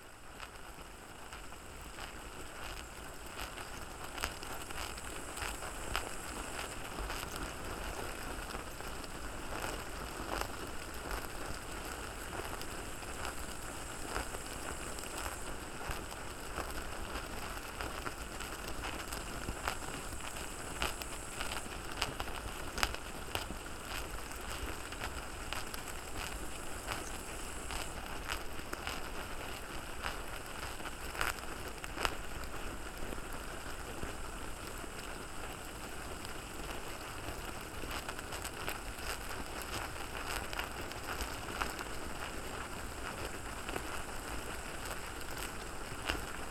Inkūnai, Lithuania, ant nest
Ant nest recorded with a pair of omni mics and diy "stick" contact microphone